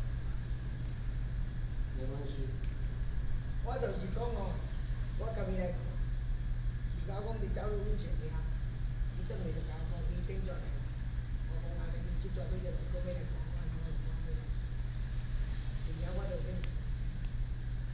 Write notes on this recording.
Tourists, Chat, Air conditioning sound, Opposite the plant noise